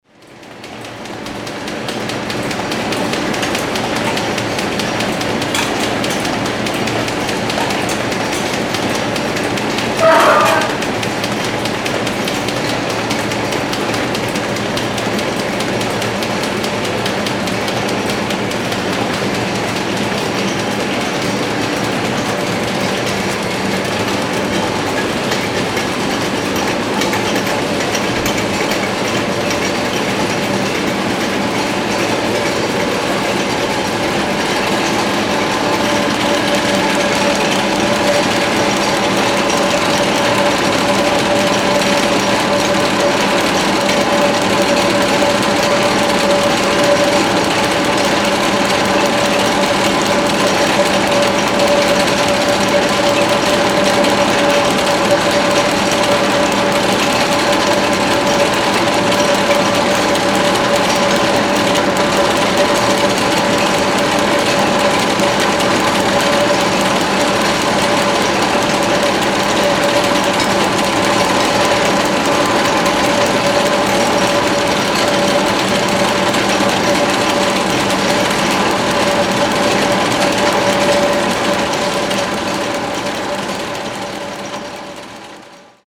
Saint-Sulpice-sur-Risle, France - Manufacture Bohin 3
Son d'une machine à la manufacture Bohin
5 March 2014